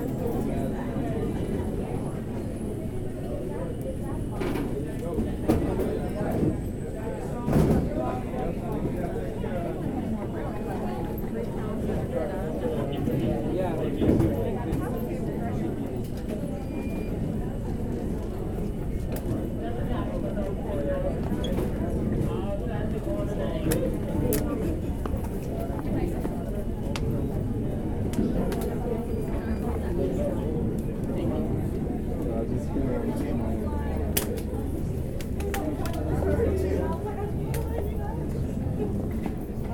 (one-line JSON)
{"title": "Hartsfield-Jackson Atlanta International Airport, N Terminal Pkwy, Atlanta, GA, USA - ATL Security Line", "date": "2022-08-18 12:15:00", "description": "The crowded line of a security checkpoint at ATL. People snake their way through a series of posts and barriers and eventually arrive at the checkpoint itself. In addition to the people waiting in line, sounds from the nearby checkpoint can be heard here.\nThis recording was produced with the help of the Field Recorder app for android. The microphones used come stock with the Moto G7 Play; the application removes all digital processing, applies a chosen EQ curve, and ensures that the recording is taken in stereo (the microphones are on opposite ends of the phone body, resulting in excellent stereo separation).", "latitude": "33.64", "longitude": "-84.44", "altitude": "318", "timezone": "America/New_York"}